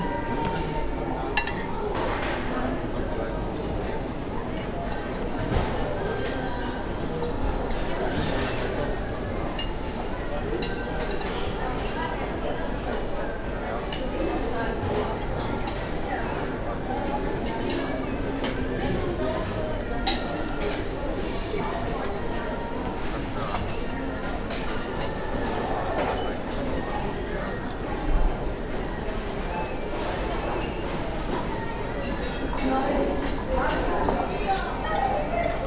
cologne, butzweilerhof, restaurant of a swedish furniture manufactor
not visible on the map yet - new branch house of a swedish furniiture company - here atmo in the restaurant
soundmap nrw: social ambiences/ listen to the people in & outdoor topographic field recordings